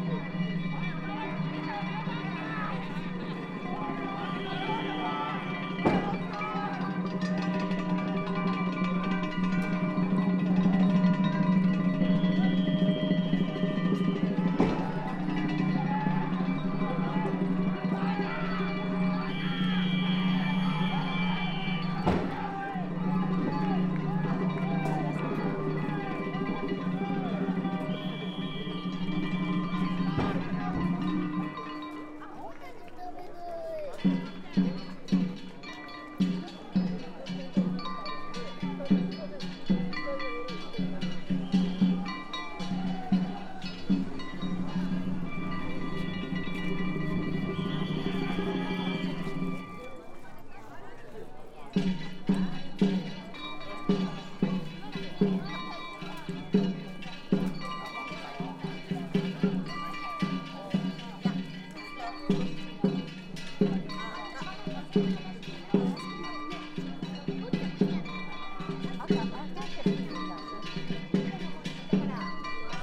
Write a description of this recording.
Descending the steps of the shrine to where the floats are assembled.